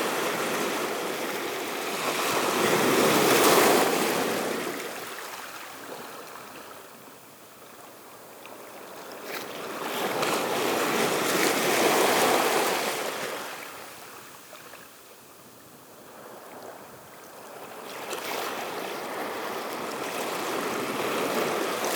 Ars-en-Ré, France - The sea on a jetty
On a jetty, the beautiful waves during a time when the sea is going slowly to low tide.